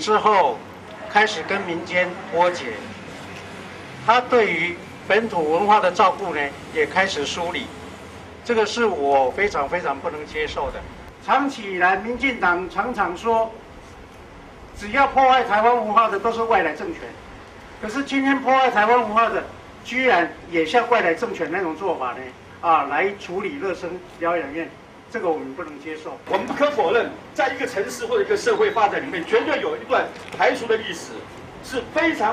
Ministry of Culture, Taipei - Protest
Protests, Lo-Sheng Sanatorium, Department of Health, Sony ECM-MS907, Sony Hi-MD MZ-RH1
中正區 (Zhongzheng), 台北市 (Taipei City), 中華民國, 16 November 2007